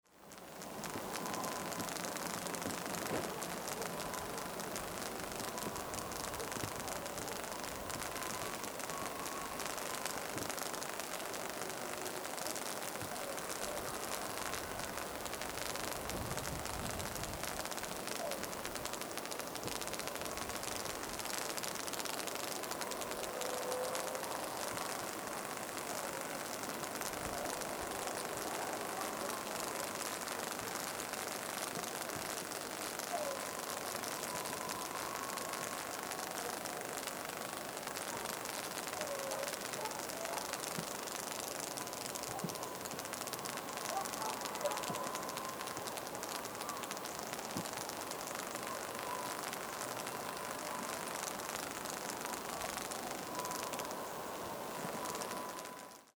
Under the High Voltage Power Line
Barcelona, Spain, 8 December 2009, ~15:00